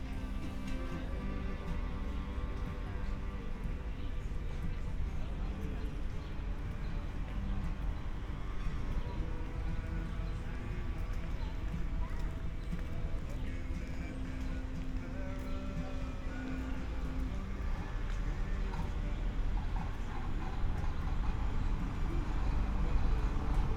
Saturday early evening at the Landwehrkanal, people passing-by, others gather along the canal, buskers playing, relaxed atmosphere
(log of the live radio aporee stream, iphone 4s, tascam ixj2, primo em172)
Berlin, 19 July 2014